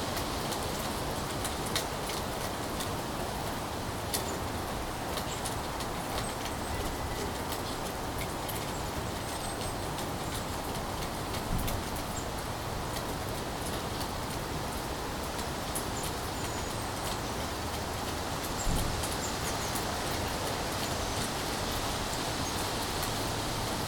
Krumhornet, Östersund, Sverige - Krumhornet backyard
The wind in the trees. Birds busy. Definitively more than two, maybe four, more?
The calmness. The waves of winds coming and going. It´s not warm, but neither cold. Good I have a jacket on. That tree in the middle, why has it that leaning pole? The common fields behind. Children must love it here. But not today. There´s a plane in the sky. With people. Where do they come from? Tourists, going to the high mountains? Focused listening, global listening. I lose my focus often. It is calm and safe here. More warm inside of course, but I will come back there soon. Good with the air here in Jämtland. Breathing the air from the mountains. Good. Keep doing it. Listening. Recording. Now waves again. Am I at the sea? The crow reminds me not. But definitely calm waves. Of wind. In the trees.